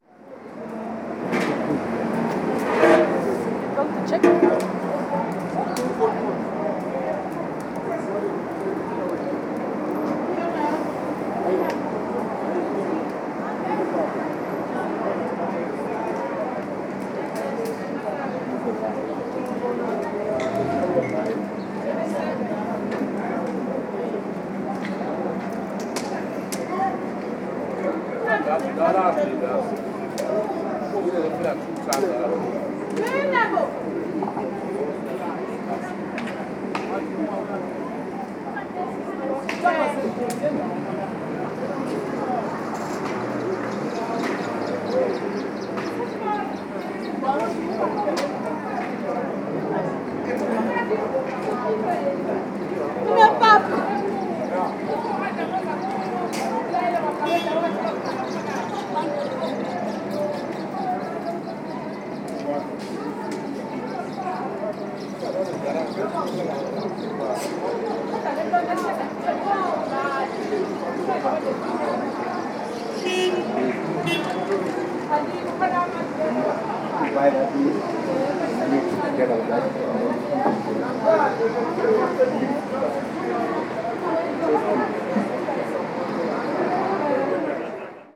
Mai Mai traditional healing market, at the braai stalls
A Winter day soundscape at the braai (bbq) stalls, next to the market of traditional healers (witch doctors): cooking fires, discussions over lunch, etc
South Africa, 5 July 2011, ~13:00